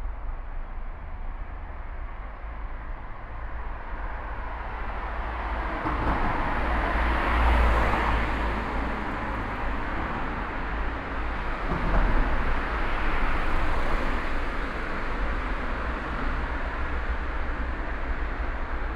essen, berne street, traffic tunnel
inside a traffic tunnel - the tube reflection of the passing traffic in the early afternoon
Projekt - Klangpromenade Essen - topographic field recordings and social ambiences
Essen, Germany